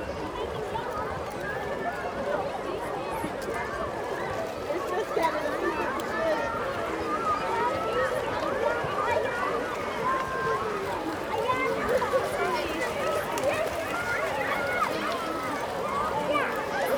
Wedding, Berlin, Germany - Paddling Pool on a hot summer Sunday
Lots of kids and families on a beautiful hot summer Sunday afternoon.
August 2015